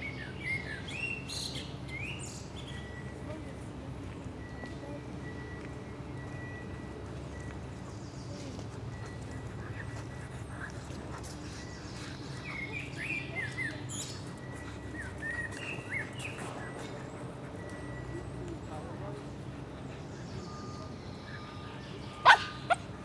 Bayreuth, Deutschland - tomb richard and cosima wagner
behind the Villa Wahnfried, the tomb of richard and cosima wagner